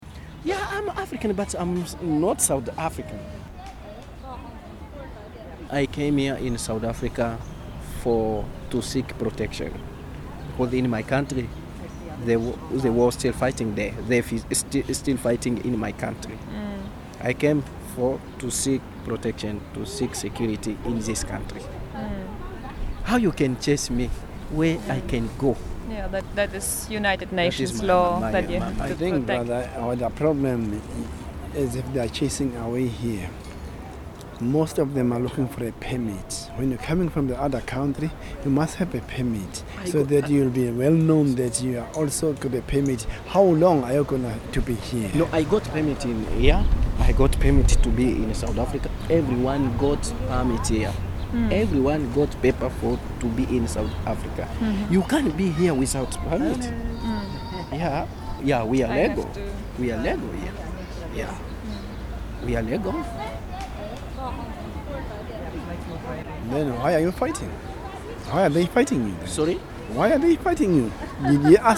Albert Park, Durban, South Africa - I'm an African....
Delphine continues his story...